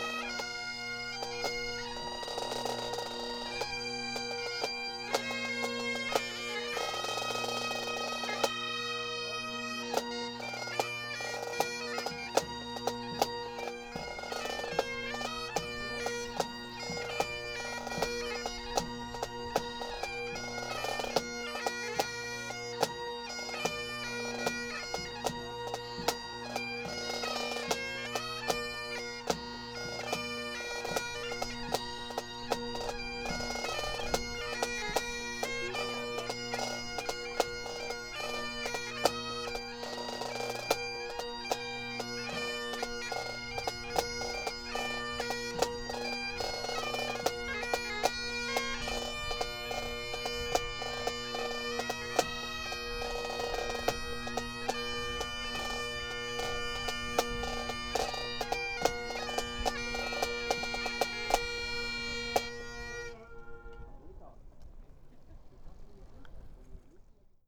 Berlin Tempelhofer Feld, bagpipe players practising
(Sony PCM D50)